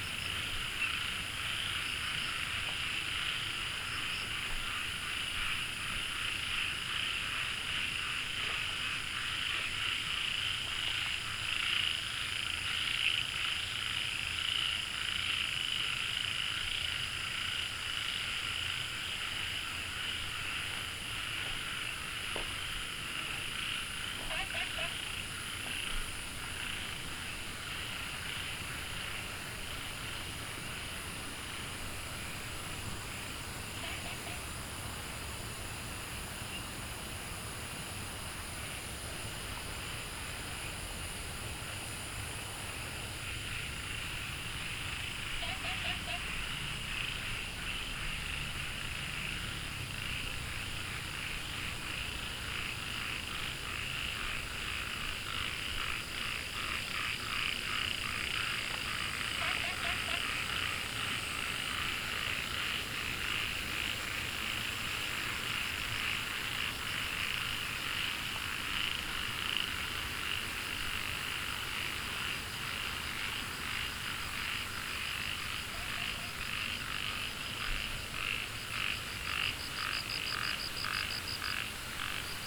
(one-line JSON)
{"title": "茅埔坑溪, 南投縣埔里鎮桃米里 - Walking along the stream", "date": "2015-08-10 19:53:00", "description": "Walking along the stream, The sound of water streams, Frogs chirping", "latitude": "23.94", "longitude": "120.94", "altitude": "470", "timezone": "Asia/Taipei"}